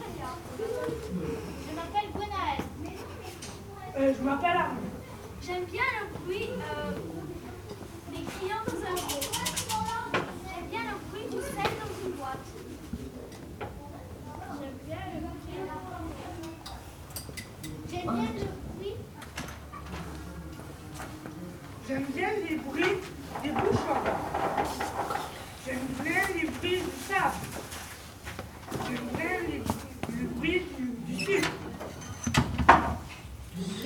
Couloir de la SEGPA, collège de Saint-Estève, Pyrénées-Orientales, France - Couloir de la SEGPA, devant la classe de M. Combes
Dans le couloir de la SEGPA, devant la classe de M. Combes.
Preneur de son : Anthony.
18 February, 14:40